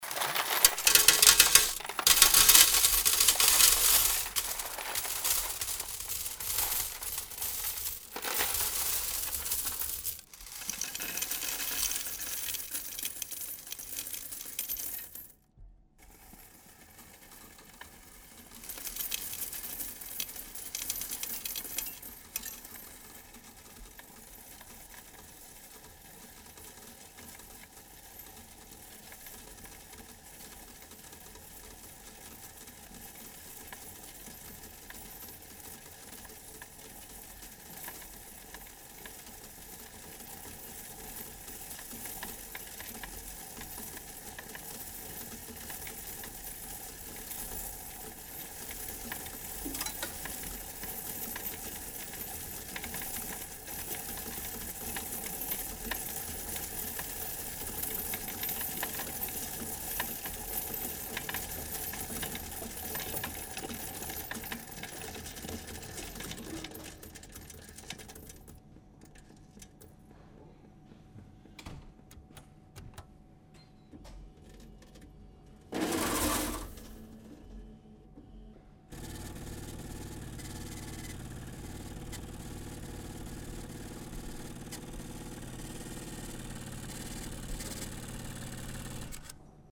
geldzählmaschine, direktmikrophonierung
Vorgang 03
soundmap nrw - sound in public spaces - in & outdoor nearfield recordings